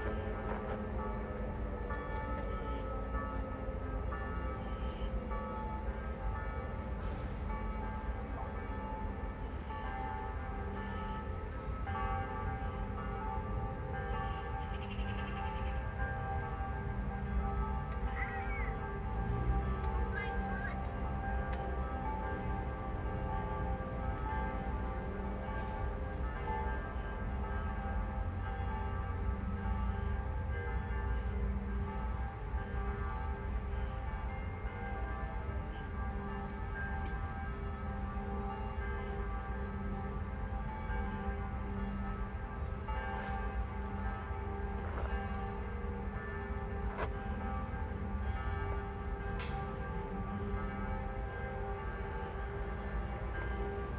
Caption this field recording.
Every Saturday at noon Linz tests its warning sirens - a sound heard city wide. The 12 o'clock bells ring at the same time. At this place in Bindermichl Park they all sound from the mid distance. Rap playing from a kid's phone is nearer, as is the shuffling of his feet on gravel. There is a strong wind from the south blowing autobahn roar towards this spot.